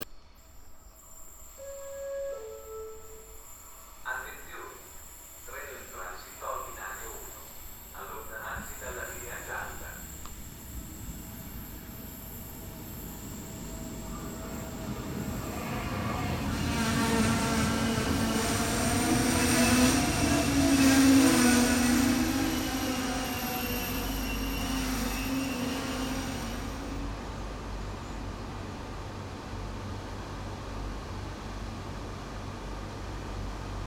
train, station
on train station